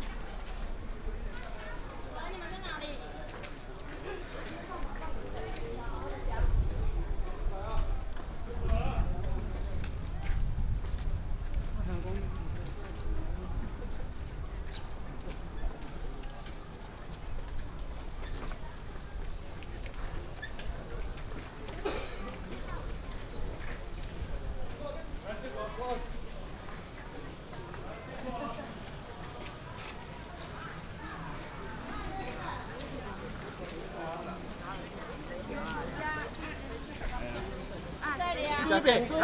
Baishatun, 苗栗縣通霄鎮 - Walk through the alley
Matsu Pilgrimage Procession, Crowded crowd, Fireworks and firecrackers sound, Walk through the alley in the village
Tongxiao Township, 白西68-1號, 9 March 2017, ~11:00